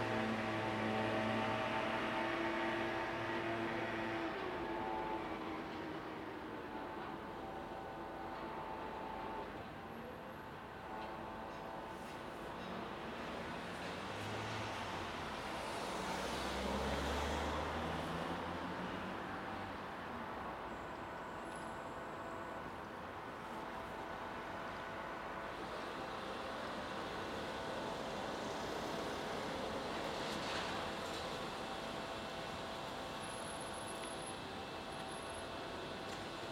Chatelaine over the bridge, Chemin des Sports, Genève, Suisse - Train in Châtelaine
I am in the middle of the bridge. a train passes under my feet. I hear the construction workers. It is near noon and cars are driving across the bridge.
Rec with Zoom H2n an rework.
12 January 2021, ~12:00